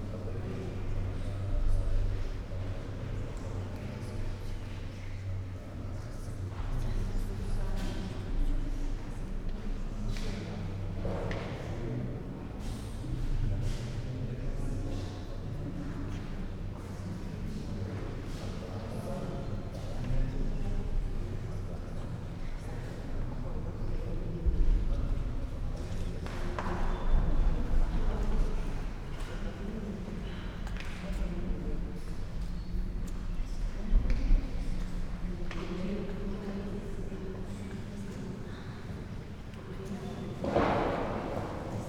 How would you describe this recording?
inside sonic ambiance, red written words ”silencio!” define visitors view